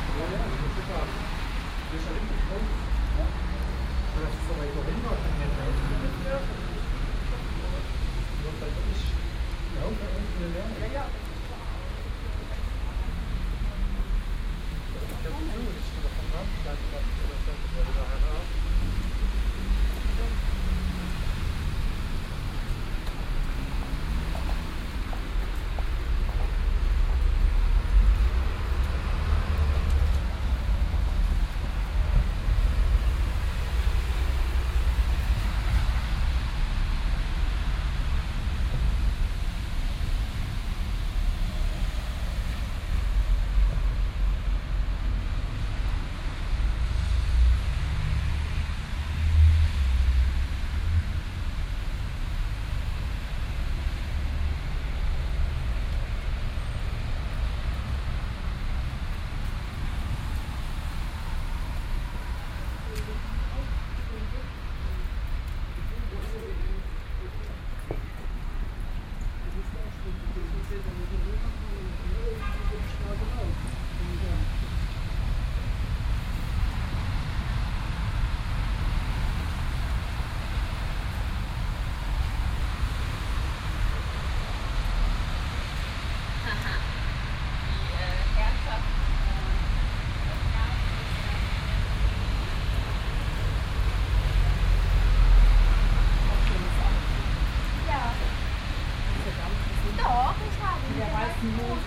Löhrrondell, square, Koblenz, Deutschland - Löhrrondell 6
Binaural recording of the square. Sixth of several recordings to describe the square acoustically. Rainy street, shop window talk.